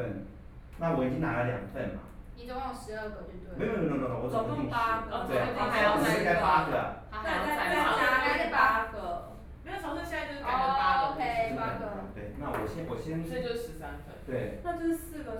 HU's Art, Taipei City - Discuss
Group of young people are meeting to discuss, Sony PCM D50 + Soundman OKM II